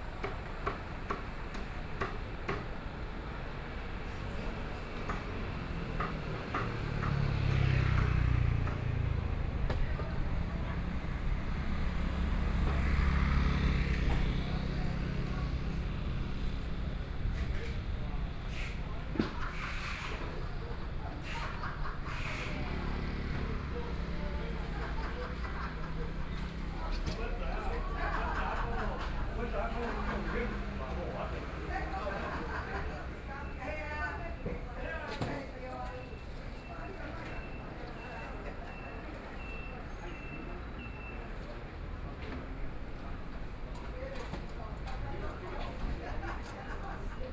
The market is ready for a break finishing cleaning, Binaural recordings, Sony PCM D50+ Soundman OKM II